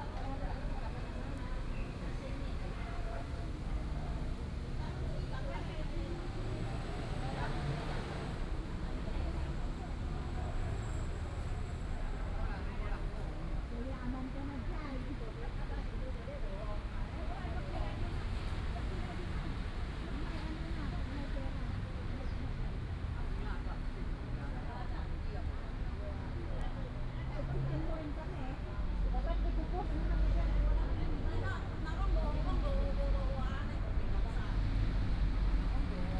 國立台灣大學公共衛生學院, Taipei City - In the Plaza
In the Plaza, Under the tree, Group of elderly people and their care workers, Traffic Sound, Bird calls
Zhongzheng District, Taipei City, Taiwan, 5 July